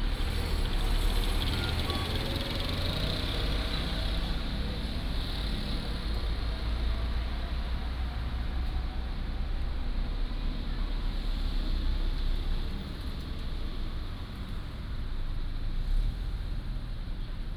Zhongzheng S. Rd., Rueisuei Township - In the side of the road
Traffic Sound, In the side of the road
Hualien County, Taiwan, 9 October 2014, ~15:00